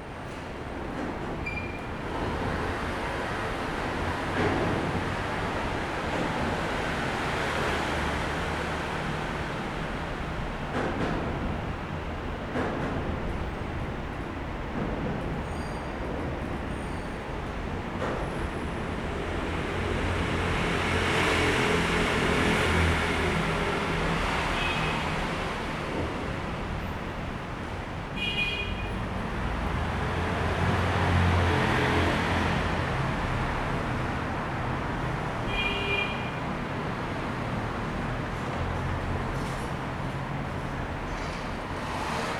{"title": "Sanmin District, Kaohsiung - Traffic Noise", "date": "2012-03-29 16:40:00", "description": "In the viaduct below, Vehicle through the noise, Sony ECM-MS907, Sony Hi-MD MZ-RH1", "latitude": "22.64", "longitude": "120.30", "altitude": "12", "timezone": "Asia/Taipei"}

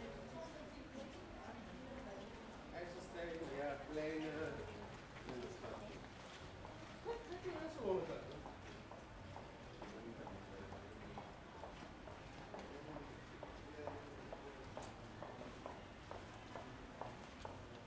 {"title": "Hoog-Catharijne CS en Leidseveer, Utrecht, Niederlande - hallway", "date": "2012-05-07 14:53:00", "description": "a hallway in hoog catharijne. the mall is to be demolished in the next year.", "latitude": "52.09", "longitude": "5.11", "altitude": "17", "timezone": "Europe/Amsterdam"}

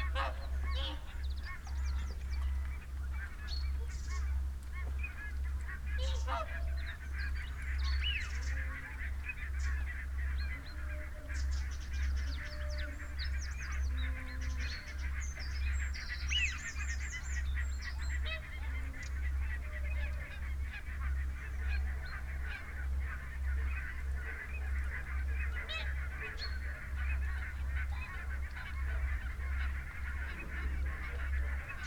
{"title": "Dumfries, UK - covid soundscape ...", "date": "2022-01-30 07:56:00", "description": "covid soundscape ... dummy head with in ear binaural luhd mics to olympus ls 14 ... folly pond ... bird calls from ... rook ... jackdaw ... crow ... wigeon ... whooper ... mute swans ... barnacle ... canada ... pink-footed geese ... teal ... mallard ... wren ... chaffinch ... pheasant ... unattended extended time edited recording ... background noise ...", "latitude": "54.98", "longitude": "-3.48", "altitude": "8", "timezone": "Europe/London"}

